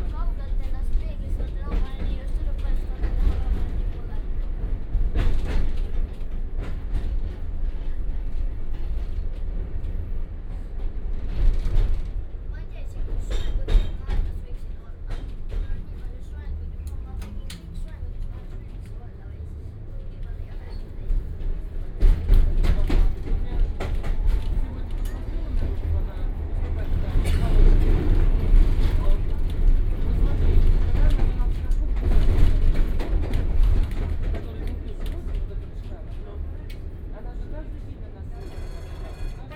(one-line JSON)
{"title": "Tallinn, Balti jaam, tram", "date": "2011-04-19 14:40:00", "description": "in tram at tallinn main station balti jaam", "latitude": "59.44", "longitude": "24.74", "altitude": "19", "timezone": "Europe/Tallinn"}